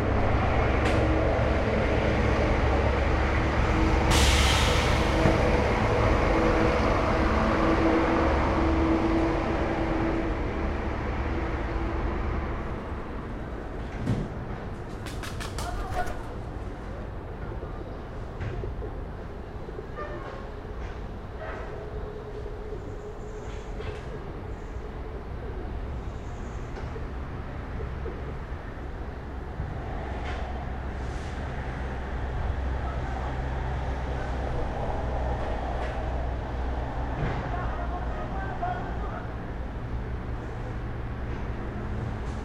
Moscow, Shipilovskiy pr. - Morning, Street Cleaning
Street cleaning, Street traffic
11 June, 09:10